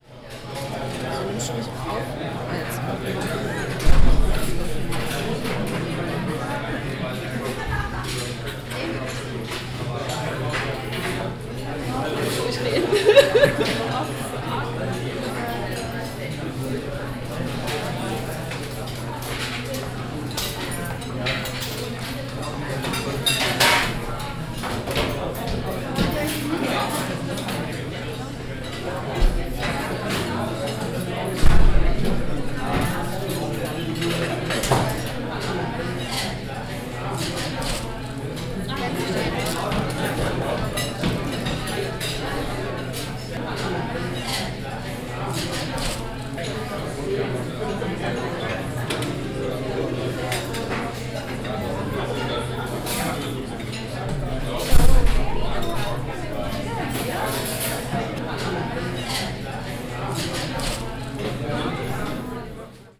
Schwäbisch Gmünd, Deutschland - Mensa
University of Design, Lunch Break in the Mensa